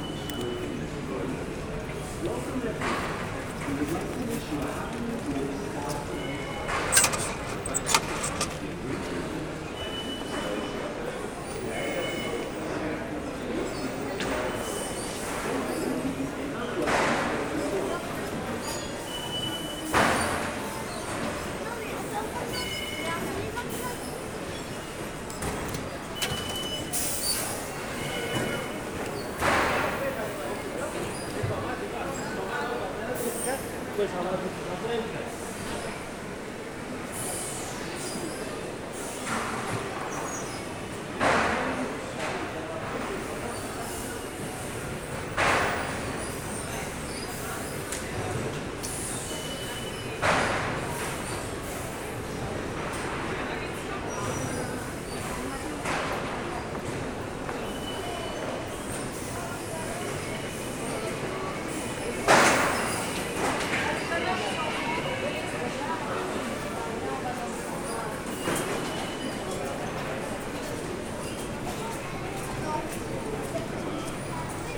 {
  "title": "Paris, France - Austerlitz station",
  "date": "2019-01-02 13:00:00",
  "description": "A long ride into the Paris metro and the Austerlitz station.\nEntrance of the metro, travel into the metro, going out during long tunnels, announcements of the Austerlitz station, some trains arriving, lot of people going out with suitcases.",
  "latitude": "48.84",
  "longitude": "2.37",
  "altitude": "34",
  "timezone": "Europe/Paris"
}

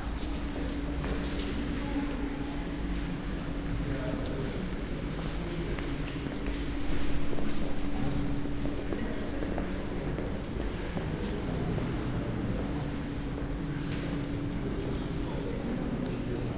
{
  "title": "Sankt Maria in Lyskirchen, An Lyskirchen, Köln, Deutschland - church ambience",
  "date": "2018-01-07 14:30:00",
  "description": "church Sankt Maria in Lyskirchen, Köln, ambience, walking around\n(Sony PCM D50, Primo EM172)",
  "latitude": "50.93",
  "longitude": "6.96",
  "altitude": "46",
  "timezone": "Europe/Berlin"
}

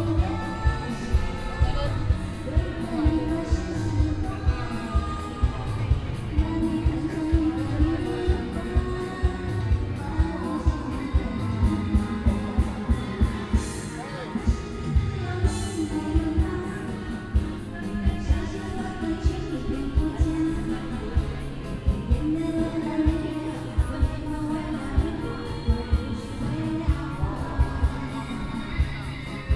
{
  "title": "壯圍鄉東港村, Yilan County - Karaoke",
  "date": "2014-07-26 12:50:00",
  "description": "Karaoke, Traffic Sound, Small village\nSony PCM D50+ Soundman OKM II",
  "latitude": "24.72",
  "longitude": "121.83",
  "altitude": "5",
  "timezone": "Asia/Taipei"
}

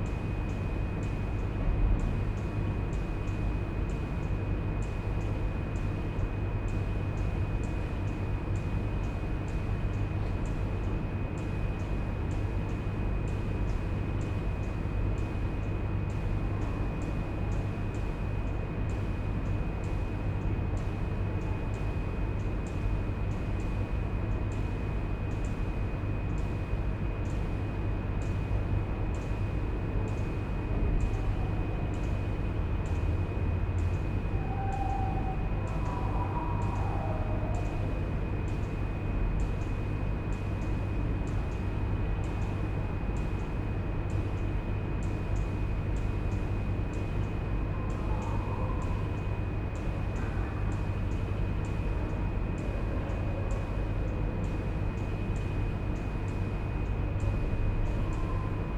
{
  "title": "Stadt-Mitte, Düsseldorf, Deutschland - Düsseldorf, Schauspielhaus, big stage, audience space",
  "date": "2012-12-15 13:15:00",
  "description": "Inside the theatre on the big stage of the house recording the ambience in the audience space. The sound of the room ventilation with regular click sounds from an alarm system. In the background sounds from a rehearsal in the foyer of the house and some doors being closed on the stage.\nThis recording is part of the intermedia sound art exhibition project - sonic states\nsoundmap nrw -topographic field recordings, social ambiences and art places",
  "latitude": "51.23",
  "longitude": "6.78",
  "altitude": "43",
  "timezone": "Europe/Berlin"
}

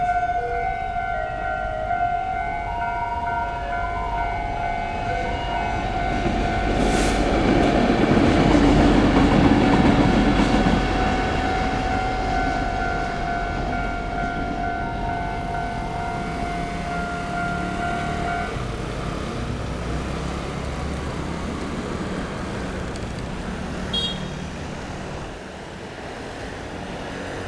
{
  "title": "Sec., Zhongxing Rd., Wujie Township - At railroad crossing",
  "date": "2014-07-25 17:17:00",
  "description": "At railroad crossing, Traffic Sound, Trains traveling through\nZoom H6 MS+ Rode NT4",
  "latitude": "24.69",
  "longitude": "121.77",
  "altitude": "11",
  "timezone": "Asia/Taipei"
}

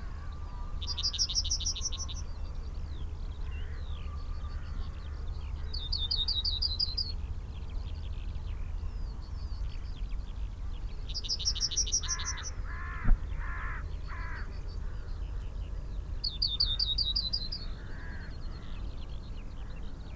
{
  "title": "Green Ln, Malton, UK - yellowhammer ... call ... song",
  "date": "2021-04-14 07:30:00",
  "description": "yellowhammer ... call ... song ... xlr SASS to Zoom H5 ... bird call ... song ... from wood pigeon ... pheasant ... skylark ... red-legged partridge ... herring gull ... linnet ... crow ... rook ... chaffinch ... blackbird ... mew gull ... taken from unattended extended unedited recording ...",
  "latitude": "54.12",
  "longitude": "-0.56",
  "altitude": "93",
  "timezone": "Europe/London"
}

